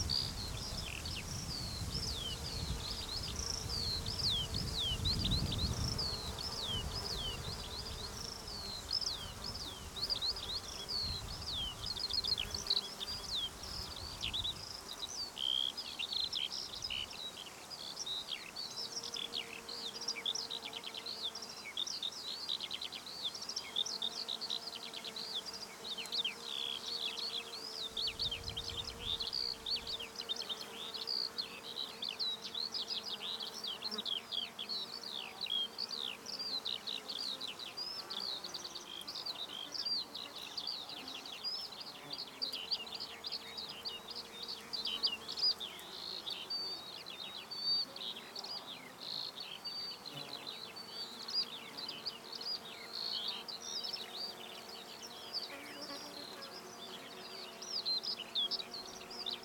ERM fieldwork -Pagari, open field
waiting for a mine explosion
Ida-Virumaa, Estonia, 2 July 2010